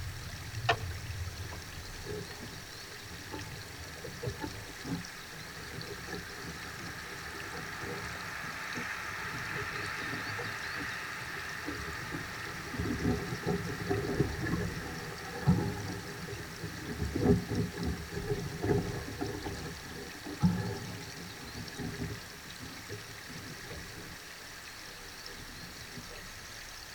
Hoellegrundsbach im Wald bei Bonaforth, Deutschland - 2 Fichten Hoellegrundsbach
2 contact microphones attached to branches of 2 tall spruces. The trees stand beside a creek 100 meters away from the railway line Göttingen-Kassel. At 6:50 there is a short local train and at 8:00 there is a cargo train passing.